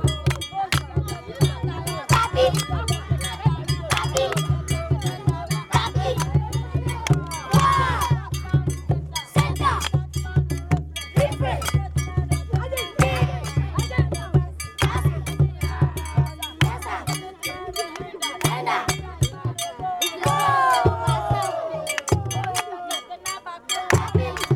Agblor Link, Keta, Ghana - Childeren in Keta making fun and music part 2
Childeren in Keta making fun and music part 2 - 12'19